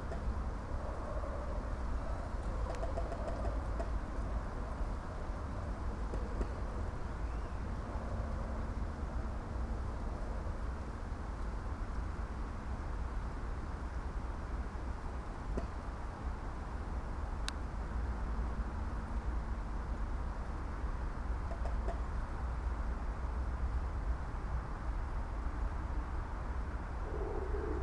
creaking trees, moved by wind and accompanied with football derby sonic impression
Maribor, Slovenia, March 2013